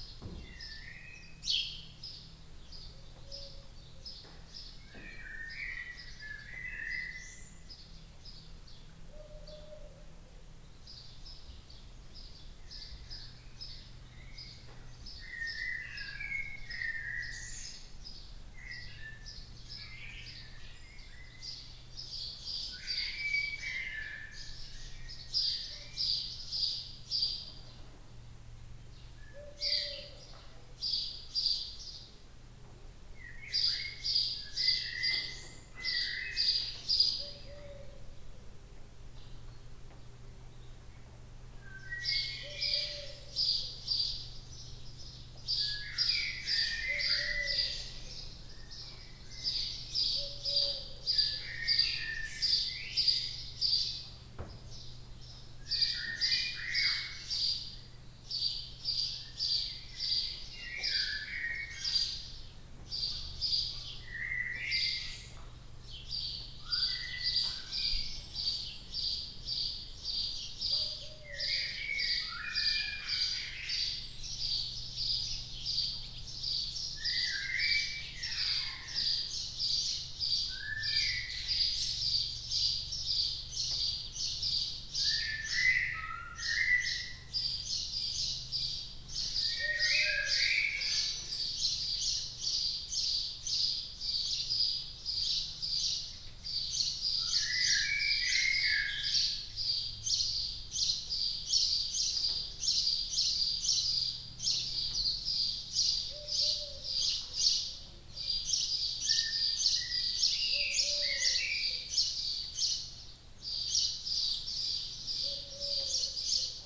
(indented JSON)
{
  "title": "Scottish Borders, UK - Birds singing in the barn",
  "date": "2013-06-12 11:00:00",
  "description": "There was a huge barn on the campsite where we were staying, and it was full of birds including swallows and swifts and blackbirds and wood pigeons (I think). The big resonant barn amplified their songs in such a lovely way that I wanted to document it. EDIROL R-09 left on top of an old boiler for 35 minutes - this is an excerpt of a much longer recording.",
  "latitude": "55.52",
  "longitude": "-2.63",
  "altitude": "119",
  "timezone": "Europe/London"
}